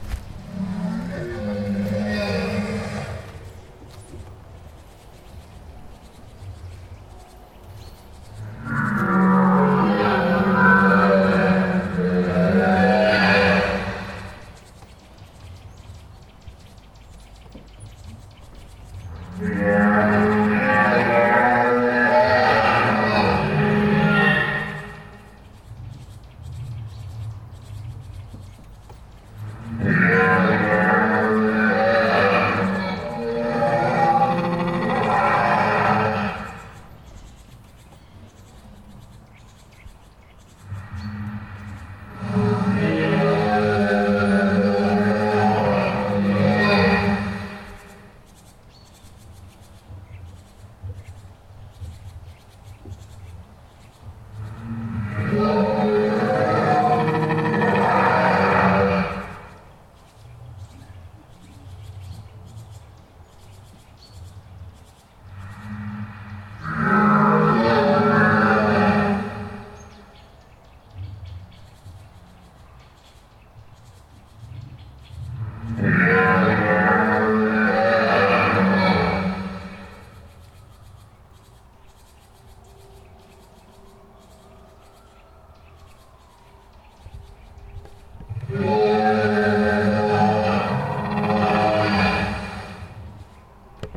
Bratislavská zoologická záhrada, Bratislava-Karlova Ves, Slowakei - Dinosaurus Park in Bratislava zoo
They are alive! In the Bratislava zoo, a set up of about 25 different dinosaurus ... they wave tails, blink eyes, breathe and above all, they make most interesting noises. Did the sound engineer dream badly because his wife snores (or vice versa), the heating wasn't repaired, the loo's blocked? I was impressed :) Recorded with a Zoom H4n
13 October, ~15:00